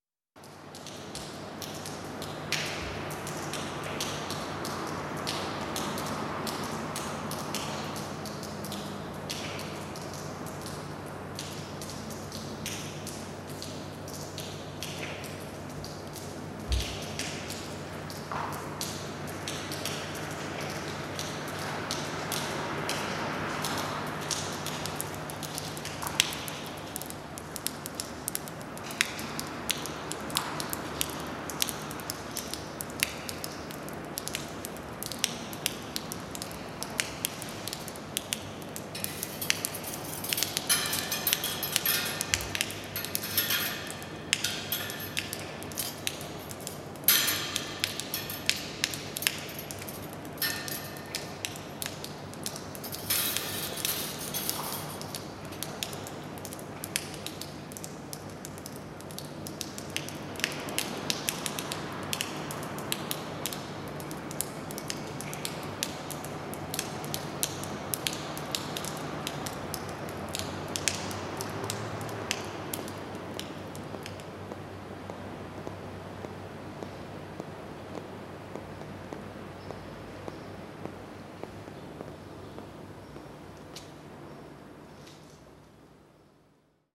Water drops and moving objects.
Minidisc recording from 1999.
25 February, ~14:00